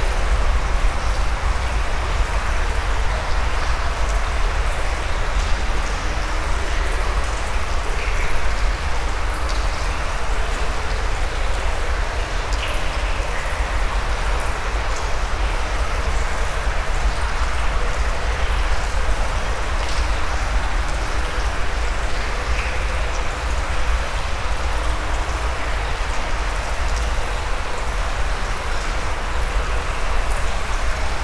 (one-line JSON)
{"title": "Vilvoorde, Belgium - Underground river", "date": "2017-12-10 07:45:00", "description": "Sound of the very dirty underground river called Senne, or Zenne in dutch. It's a large underground tunnel where a crappy river is flowing below the city.", "latitude": "50.93", "longitude": "4.42", "altitude": "12", "timezone": "Europe/Brussels"}